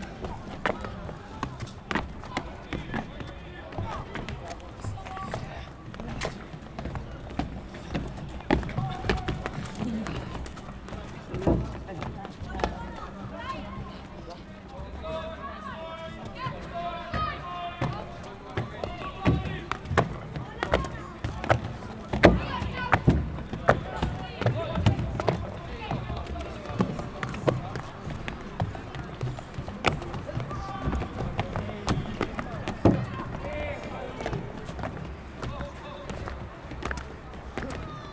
Santa Cruz Sport Field.
Zoom H4n.